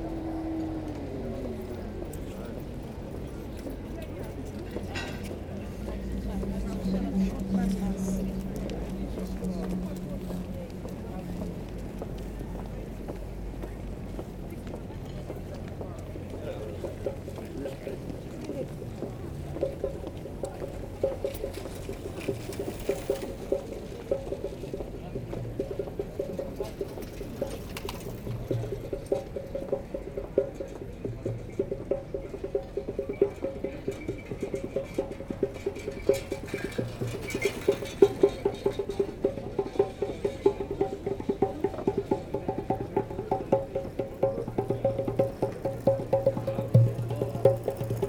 Maastricht, Pays-Bas - Sint-Servaas bridge
A long quiet walk on the Sint-Servaas bridge. At the beginning, I'm walking along the hundred bikes. After, as three barges are passing on the Maas river, the bridge is elevating. Bikes must drive on a narrow metallic footbridge. Boats passes, two are producing big engine noise, it's the third time I spot the Puccini from Remich, Luxemburg. When the bridge descends back, the barrier produces a specific sound to Maastricht.